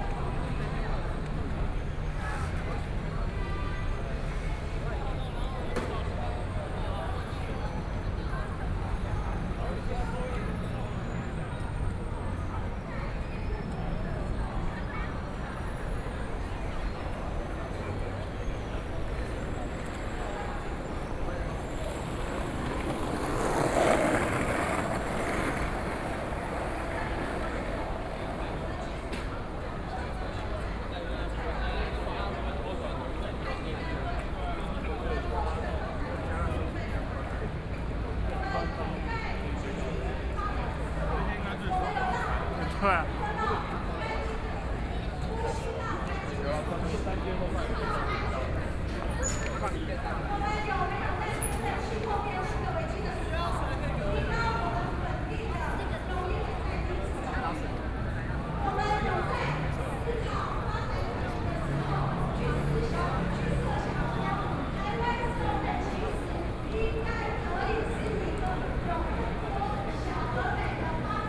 {"title": "Qingdao E. Rd., Zhongzheng Dist. - Walking through the site in protest", "date": "2014-03-19 21:47:00", "description": "Walking through the site in protest, Traffic Sound, People and students occupied the Legislature\nBinaural recordings", "latitude": "25.04", "longitude": "121.52", "altitude": "15", "timezone": "Asia/Taipei"}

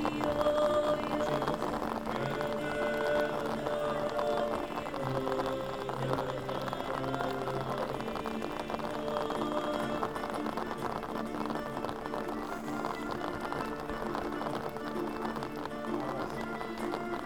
{"title": "Bistrampolis, Lithuania", "date": "2015-08-09 18:10:00", "description": "Georgian vocal ensemble CHVENEBUREBI with lithuanian singer sings lithuanian song. recording was done outside the building in the rain under the umbrella...", "latitude": "55.60", "longitude": "24.36", "altitude": "67", "timezone": "Europe/Vilnius"}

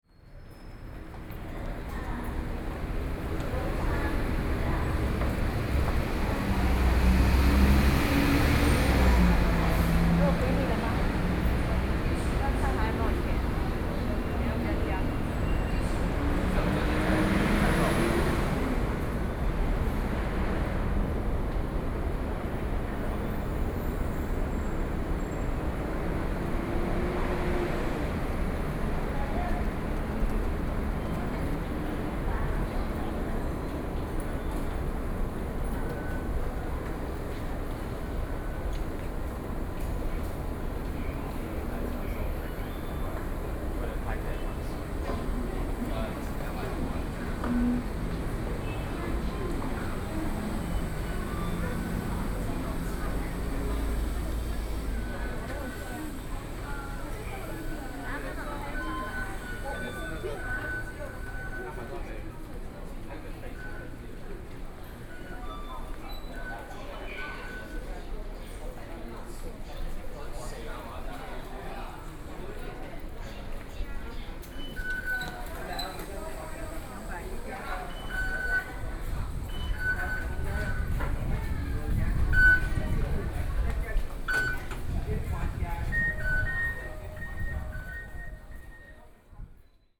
{"title": "Taipei city, Taiwan - Walking into the MRT", "date": "2013-05-25 09:13:00", "description": "Walking into the MRT station, Sony PCM D50 + Soundman OKM II", "latitude": "25.04", "longitude": "121.50", "altitude": "7", "timezone": "Asia/Taipei"}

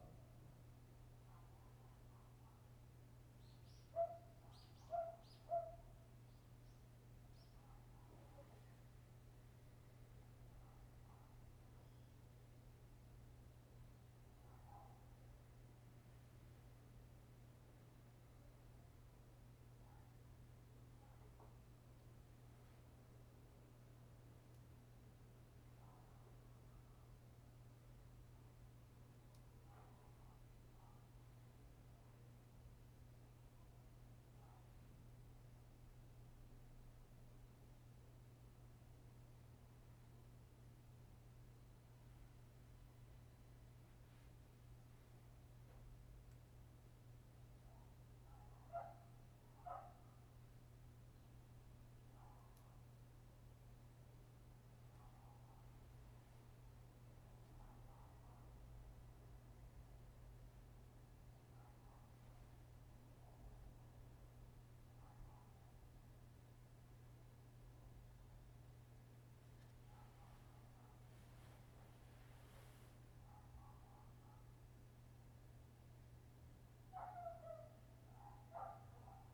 {"title": "Garak-ro, Gimhae-si - Dogs barking", "date": "2014-12-17 12:10:00", "description": "Traditional Korean-style house, Aircraft flying through, Dogs barking\nZoom H2n MS+XY", "latitude": "35.23", "longitude": "128.88", "altitude": "10", "timezone": "Asia/Seoul"}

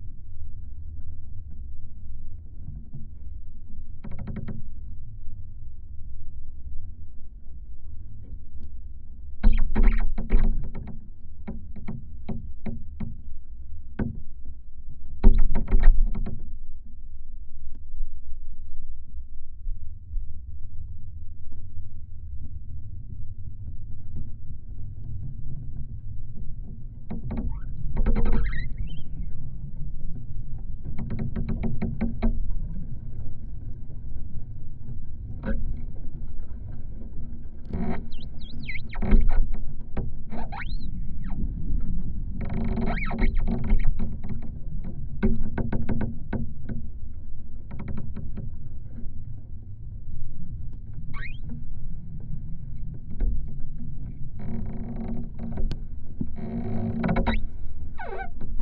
{"title": "Ąžuolija, Lithuania, dead tree 2", "date": "2022-03-26 12:15:00", "description": "Windy day, half fallen tree rubbing to other tree", "latitude": "55.46", "longitude": "25.58", "altitude": "143", "timezone": "Europe/Vilnius"}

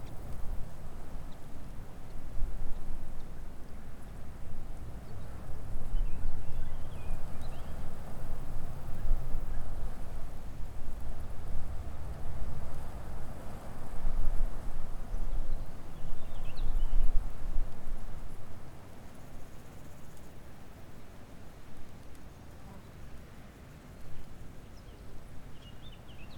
{"title": "Candal from a distance, Portugal - Candal from a distance", "date": "2012-07-18 15:30:00", "description": "listening to the village Candal from a distance, mostly wind and insects but also some sounds from the village. world listening day, recorded together with Ginte Zulyte.", "latitude": "40.85", "longitude": "-8.16", "altitude": "744", "timezone": "Europe/Lisbon"}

